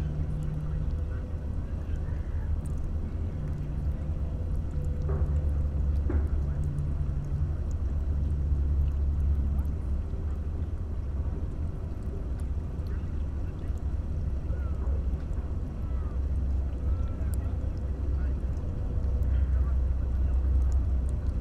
Sydney Olympic Park, NSW, Australia - By the pier
I came here with Environmental Scientist/Mosquito Specialist/Sound Artist Cameron Webb (aka Seaworthy) who normally works in this area who . And we did a little recording :)
Recorded with a pair of Usi's (Primo EM172's) into an Olmypus LS-5.
Silverwater NSW, Australia, 31 August 2015